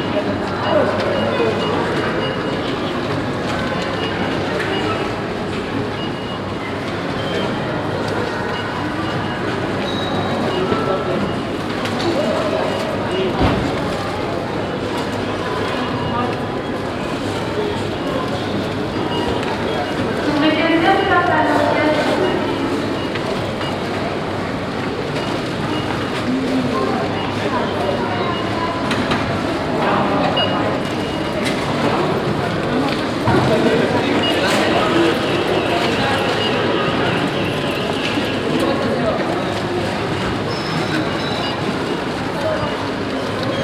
Schmett, Ulflingen, Luxemburg - Huldange, shopping mall
In einem Shopping Center am Dreiländereck zu Belgien und Deutschland. Der Klang von Menschenstimmen, Einkaufswagen und Supermarktmusik im Piepen der elektronischen Kassenanlagen.
Inside a shopping mall at the border corner to Belgium and Germany. The sound of human voices, trolleys and supermarkt music in the peeping of the electronic cash tills.